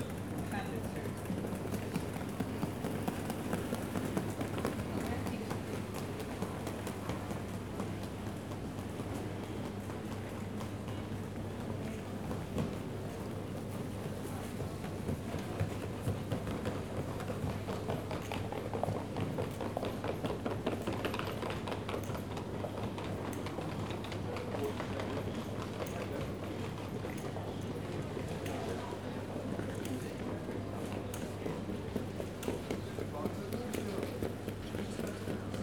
London Luton Airport, Airport Way, Luton - Luton Airport departure lounge
Waiting in the departure lounge at Luton Airport, sitting next to an authorised personnel only door, and in front of a hall leading to some of the departure gates.
You hear the rising and fading sounds of footsteps and ticking of luggage wheels across the floor tiles, staff and travellers talking briefly, and the hum of a fridge in the dining area opposite the seats.
Recorded on zoom H4n internal mics.
Luton, UK, 11 March 2015, 15:10